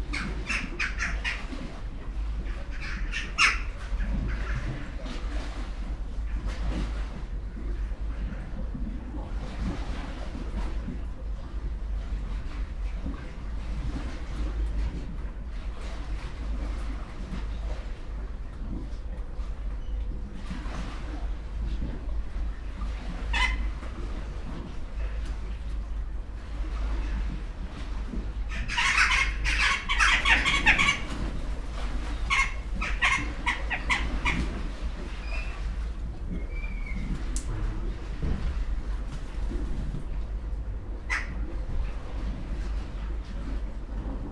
{"title": "Rovinj, Croatia - birds", "date": "2012-12-29 12:40:00", "description": "narrow staircase to the sea, an old man passing by, black birds flying over roofs, waves ...", "latitude": "45.08", "longitude": "13.63", "altitude": "15", "timezone": "Europe/Zagreb"}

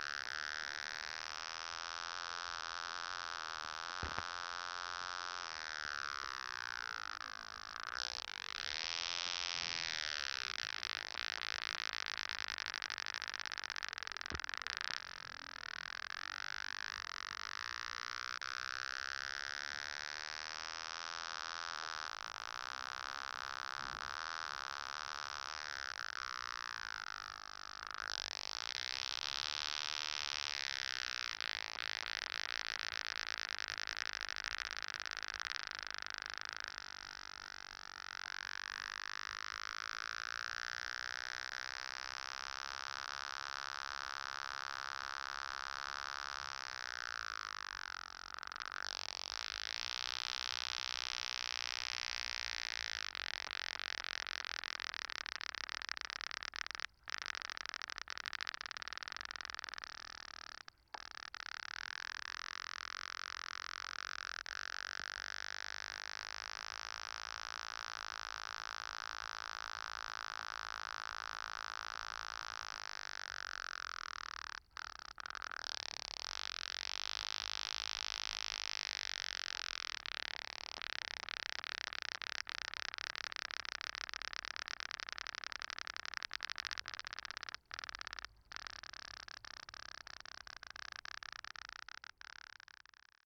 {"title": "Water grass, Riga Botanical Gardens", "date": "2011-10-10 02:46:00", "description": "Plant recording made for White Night, Riga 2011.", "latitude": "56.95", "longitude": "24.06", "altitude": "12", "timezone": "Europe/Riga"}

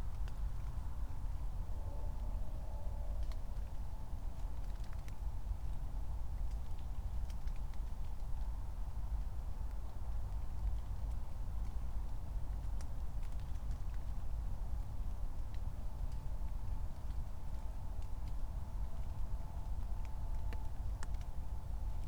{"title": "Königsheide, Berlin - forest ambience at the pond", "date": "2020-05-23", "description": "Part 1 of a 12h sonic observation at Königsheideteich, a small pond and sanctuary for amphibia. Recordings made with a remote controlled recording unit. Distant city drone (cars, S-Bahn trains etc.) is present more or less all the time in this inner city Berlin forest. Drops of rain\n(IQAudio Zero/Raspberry Pi Zero, Primo EM172)", "latitude": "52.45", "longitude": "13.49", "altitude": "38", "timezone": "Europe/Berlin"}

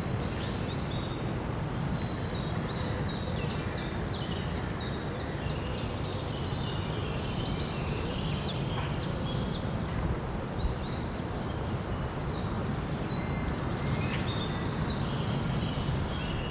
{"title": "Bois des Bruyères, Waterloo, Belgique - Morning birds", "date": "2022-04-11 09:58:00", "description": "Tech Note : Ambeo Smart Headset binaural → iPhone, listen with headphones.", "latitude": "50.72", "longitude": "4.38", "altitude": "117", "timezone": "Europe/Brussels"}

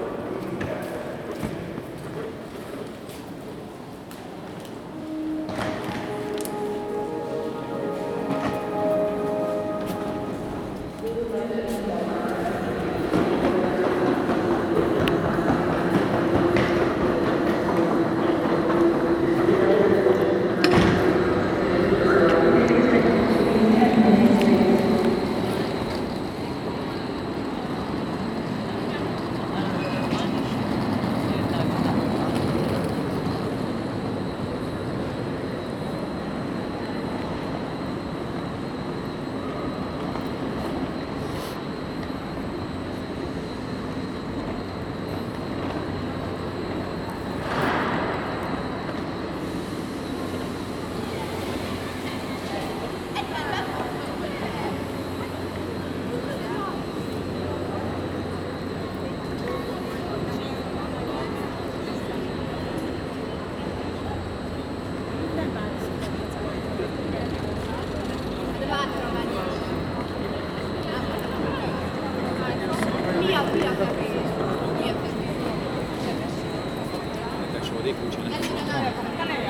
{"title": "Budapest, Budapest-Nyugati, Hungary - Budapest és Prága között", "date": "2020-02-15 10:06:00", "description": "Ěrtesítés a vonat indulásáról Budapest és Prága között", "latitude": "47.51", "longitude": "19.06", "altitude": "109", "timezone": "Europe/Budapest"}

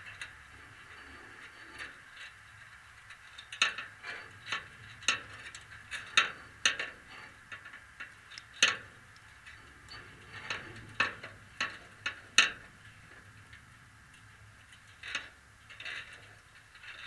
{"title": "Utena, Lithuania, fence in a lee - metal fence in a lee", "date": "2012-02-28 14:55:00", "description": "contact microphones on the fence that is in the forest", "latitude": "55.52", "longitude": "25.61", "altitude": "117", "timezone": "Europe/Vilnius"}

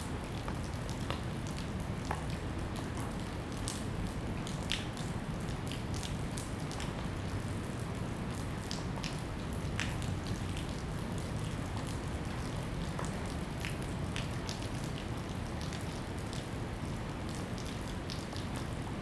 {"title": "Taipei Railway Workshop, Taiwan - Thunderstorm", "date": "2014-09-24 17:23:00", "description": "Thunderstorm, Disused railway factory\nZoom H2n MS+XY", "latitude": "25.05", "longitude": "121.56", "altitude": "9", "timezone": "Asia/Taipei"}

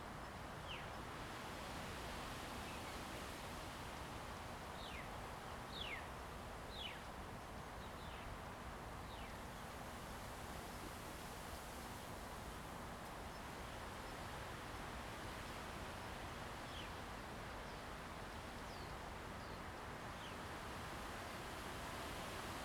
{"title": "Jinning Township, Kinmen County - Wind and the woods", "date": "2014-11-03 08:20:00", "description": "Birds singing, Wind, In the woods\nZoom H2n MS+XY", "latitude": "24.48", "longitude": "118.32", "altitude": "19", "timezone": "Asia/Taipei"}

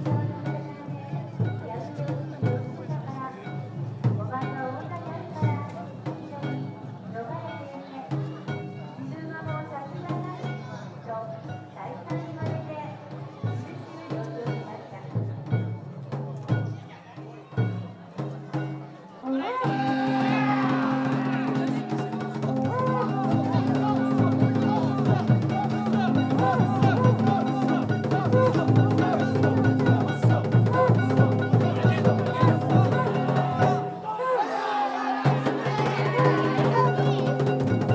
{"title": "Wakaba, Yahatanishi Ward, Kitakyushu, Fukuoka, Japan - Aioichou Summer Festival Opening", "date": "2018-08-01 13:00:00", "description": "Festival floats are raced though crowds of spectators.", "latitude": "33.85", "longitude": "130.74", "altitude": "19", "timezone": "Asia/Tokyo"}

